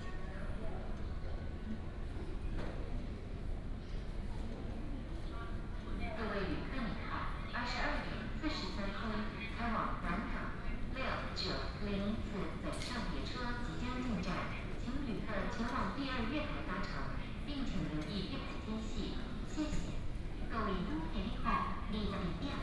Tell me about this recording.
In the station hall, Night station hall, Binaural recordings, Sony PCM D100+ Soundman OKM II